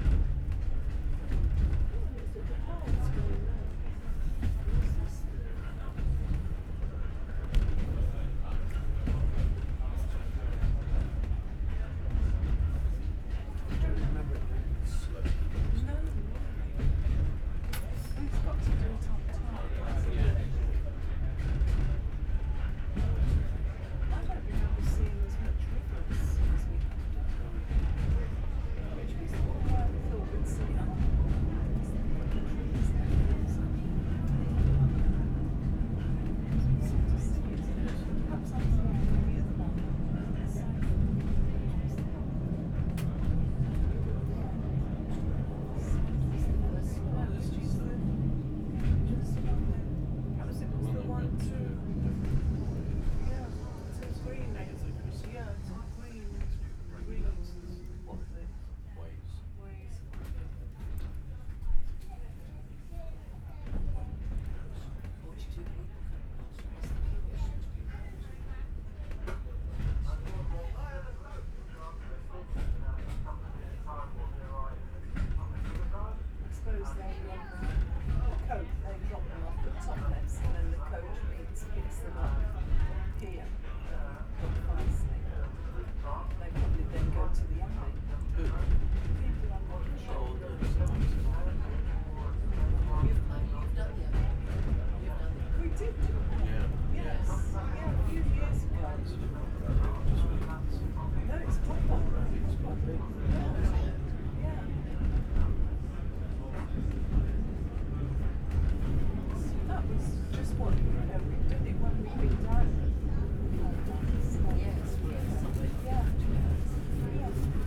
South Devon Railway, Staverton, Totnes, UK - Steam Train Ride on the South Devon Railway.
Steam train journey between Buckfastleigh and Staverton. The sharp snapping sound is the guard clipping tickets. There is also the sound of the creaking carriage and an occasional hoot of the engines whistle. Recorded on a Zoom H5
12 September 2017, 11:10